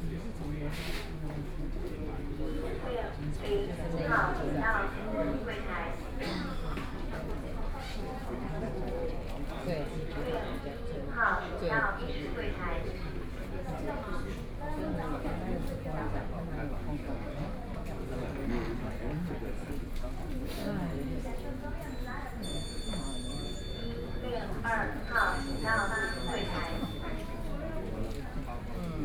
Ministry of Health and Welfare, Taipei - Counter waiting
Waiting broadcast message when the file handle, Sony PCM D50+ Soundman OKM II
9 October, 10:27